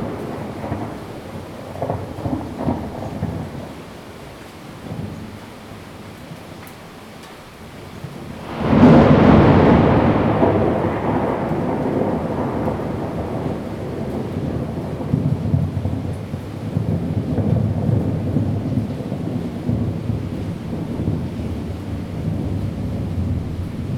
Rende 2nd Rd., Bade Dist. - thunder
Thunderstorms
Zoom H2n MS+XY+ Spatial audio
Taoyuan City, Taiwan, 7 July 2017